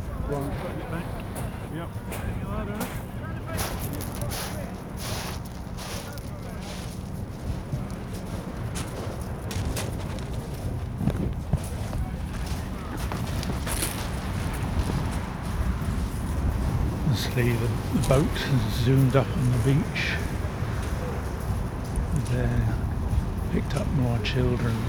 Refugees picked-up by the Dungeness Lifeboat come ashore, WXGH+QR Romney Marsh, UK - Refugees picked-up by the Dungeness Lifeboat come ashore
Increasing numbers of refugees are being trafficked across the Chanel from France during 2021. Their boats are often inadequate and dangerous and they are picked-up at sea by UK lifeboats. This is recording of the end of one such rescue. At around 1min40 the life boat engine is heard speeding towards the shore to rocket out of the water onto the shingle bank with an intense hiss of stones. It is brief and spectacular. From there it is hauled up by machines. The refugees, including several children, are met by police and immigration officials and walk up the beach to the lifeboat station. A pregnant woman is carried on a stretcher.